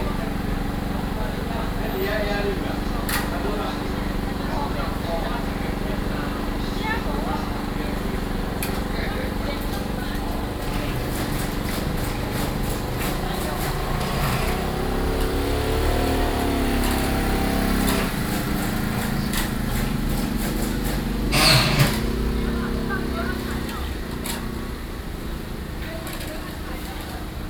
野柳, Wanli Dist., New Taipei City - Yehliu
新北市 (New Taipei City), 中華民國, 25 June 2012